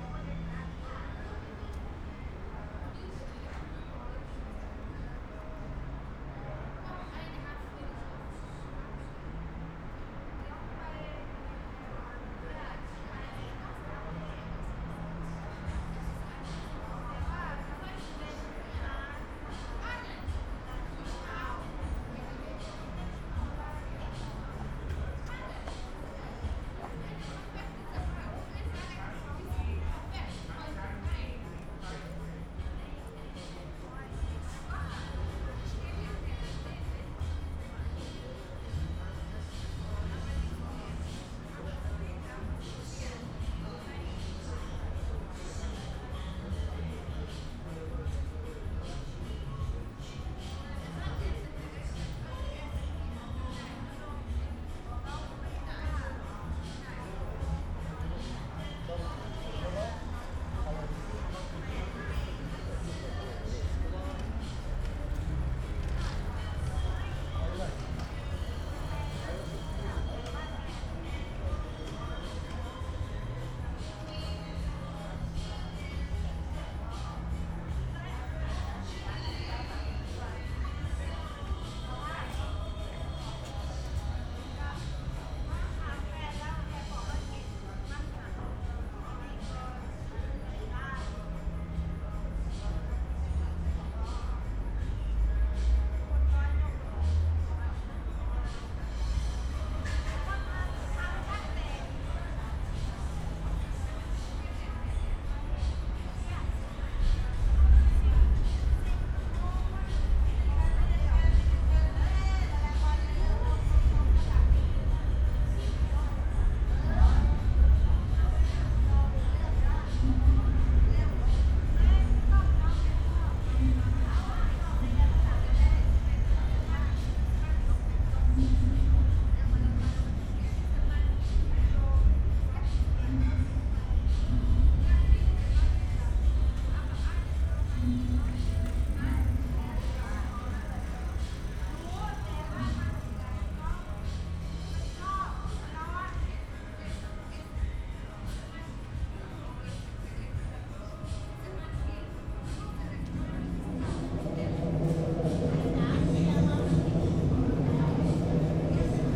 Köln Eigelstein, weekday nigh ambience, voices and music from two bars, trains passing-by on nearby overpass
(Sony PCM D50, Primo EM172)